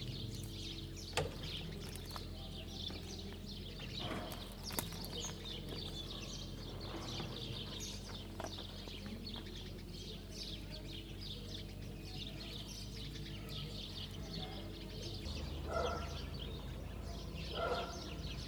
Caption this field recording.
Cafe em Barca dAlva, Douro, Portugal. Mapa Sonoro do rio Douro. Caffe in Barca dAlva, Douro, portugal. Douro River Sound Map